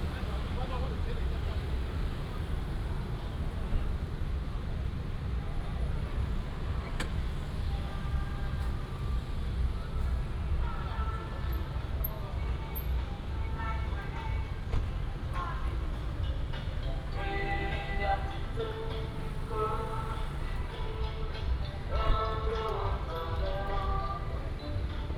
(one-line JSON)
{"title": "Ln., Sec., Linsen Rd., Huwei Township - At the corner of the road", "date": "2017-03-03 14:08:00", "description": "Fireworks and firecrackers, Traffic sound, Baishatun Matsu Pilgrimage Procession", "latitude": "23.70", "longitude": "120.42", "altitude": "27", "timezone": "Asia/Taipei"}